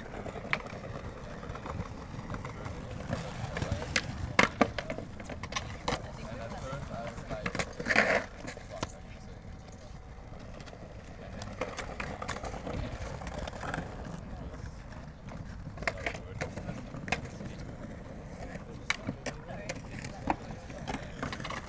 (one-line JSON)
{"title": "Tempelhof, Berlin, Deutschland - skater area", "date": "2015-07-26 20:25:00", "description": "Skater's area on the former Tempelhof airport\n(Sony PCM D50, DPA4060)", "latitude": "52.47", "longitude": "13.41", "altitude": "46", "timezone": "Europe/Berlin"}